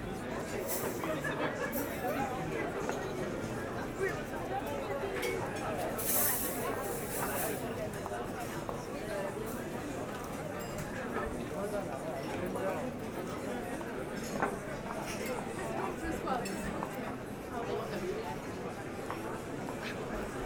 Tours, France - Place Plumereau atmosphere
Very noisy ambiance of the place Plumereau, where bars are completely full everywhere. Happy people, happy students, local concert and noisy festive ambience on a saturday evening.
12 August 2017, 21:00